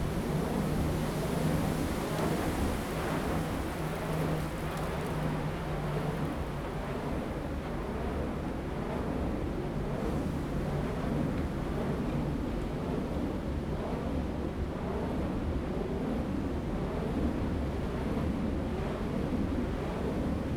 崎頂, 竹南鎮 Zhunan Township - Wind
Wind, Wind Turbines, forest, Zoom H2n MS+XY
Zhunan Township, 台61線, 30 August 2017, 12:00